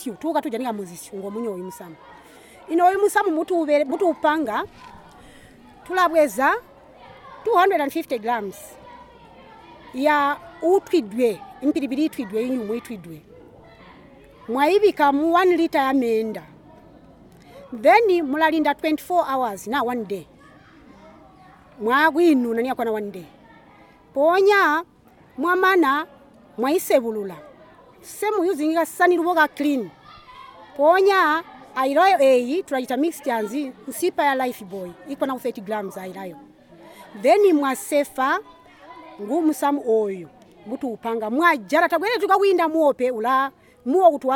Mweezya Primary School, Sinazongwe, Zambia - Cleopatra's Chemistry...
Cleopatra of Mweezya Women’s Club gives us an impressively detailed presentation about the women’s production of organic pesticides… this will be one of the recordings, which we later take on-air at Zongwe FM in a show with DJ Mo...